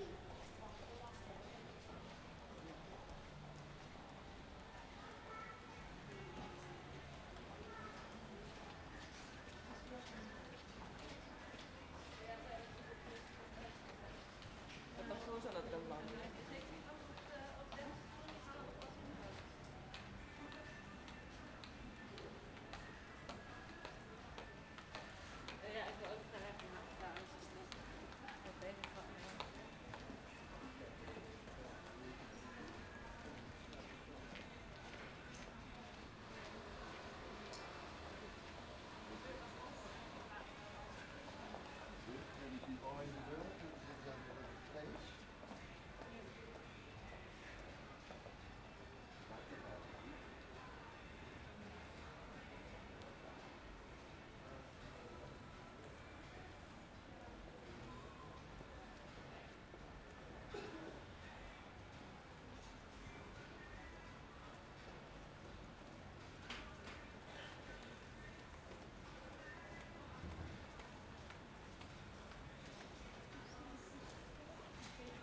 {"title": "Hoog-Catharijne CS en Leidseveer, Utrecht, Niederlande - hallway", "date": "2012-05-07 14:53:00", "description": "a hallway in hoog catharijne. the mall is to be demolished in the next year.", "latitude": "52.09", "longitude": "5.11", "altitude": "17", "timezone": "Europe/Amsterdam"}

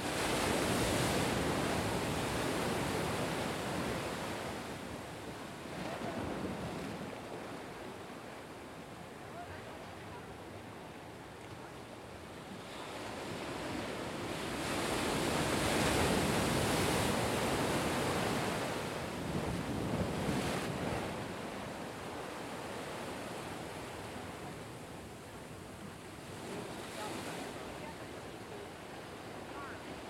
{"title": "Harbor Way, Santa Barbara, CA, USA - Waves of Santa Barbara Harbor", "date": "2019-10-20 15:15:00", "description": "This is the sound of the waves that I recorded at Santa Barbara Harbor using Tascam DR-40 Linear PCM Recorder.", "latitude": "34.40", "longitude": "-119.69", "altitude": "2", "timezone": "America/Los_Angeles"}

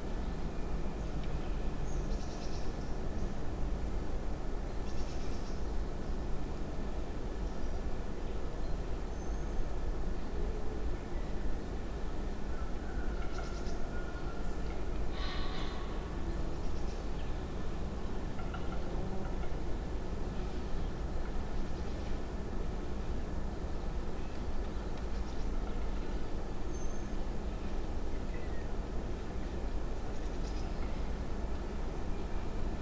{"title": "Askeby, Danmark - Fanefjord Skov 130317", "date": "2017-03-13 12:30:00", "description": "Recorded from my window with directional microphone pointing towards the forrest", "latitude": "54.90", "longitude": "12.21", "altitude": "22", "timezone": "Europe/Copenhagen"}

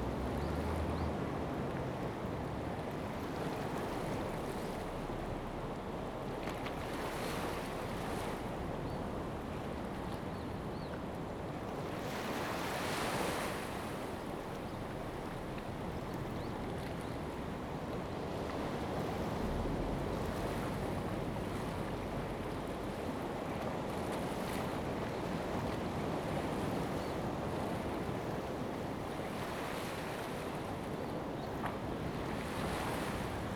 {"title": "公舘村, Lüdao Township - Small pier", "date": "2014-10-31 11:11:00", "description": "In the Small pier, sound of the waves\nZoom H2n MS +XY", "latitude": "22.65", "longitude": "121.50", "altitude": "4", "timezone": "Asia/Taipei"}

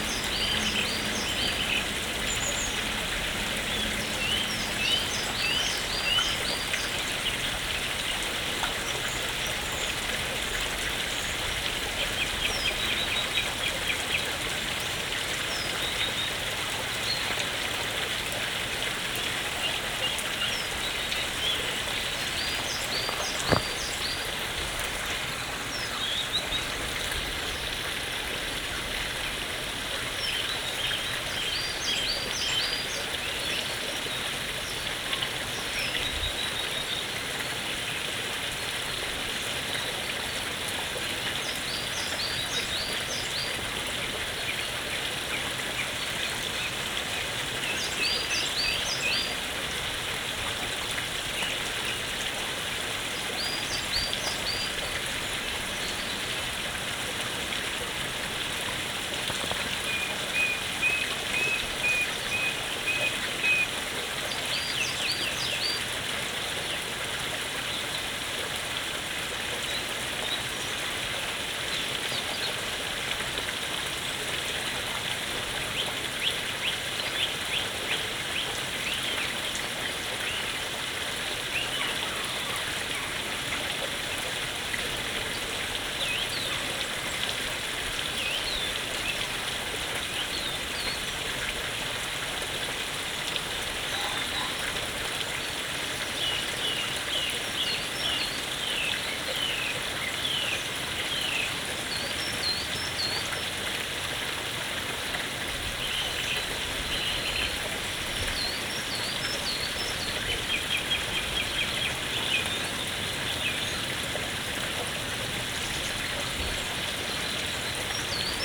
{"title": "Loaning Head, Alston, UK - Evening walk", "date": "2022-03-27 18:24:00", "description": "Evening walk from Garrigill to Loaning Head", "latitude": "54.77", "longitude": "-2.40", "altitude": "364", "timezone": "Europe/London"}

